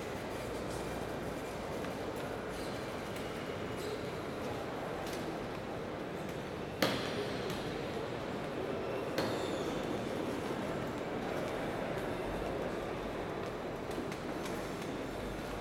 C. Cerrito, Montevideo, Departamento de Montevideo, Uruguay - Banco de la Republica Oriental del Uruguay - Montevideo
Siège de la "Banco de la Republica Oriental del Uruguay" - Montevideo
ambiance intérieure.